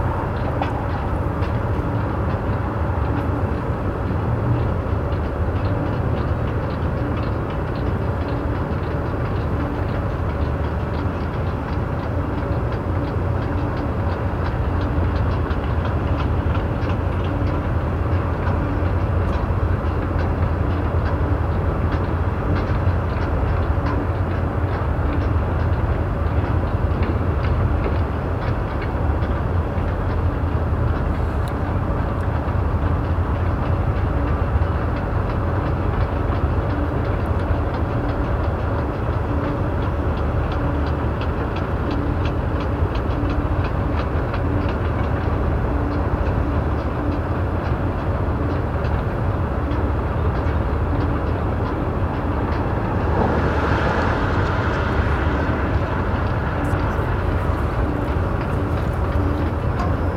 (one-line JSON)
{"title": "Hi-Crush Proppant Frac Sand mine, Wyeville, WI, USA - Hi-Crush Frac Sand extraction and loading", "date": "2013-05-05 16:30:00", "description": "Wisconsin has the most suitable sand in the country for the oil frac boom. Billions of pounds of this sand is being sent to wells in PA. Displacing land from one part of the country for profit in another part.", "latitude": "44.04", "longitude": "-90.41", "altitude": "279", "timezone": "America/Chicago"}